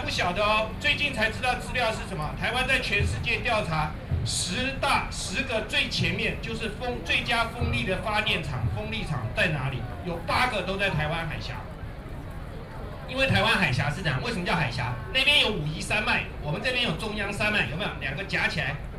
Antinuclear Civic Forum, Sony PCM D50 + Soundman OKM II
台北市 (Taipei City), 中華民國, 24 May 2013, ~20:00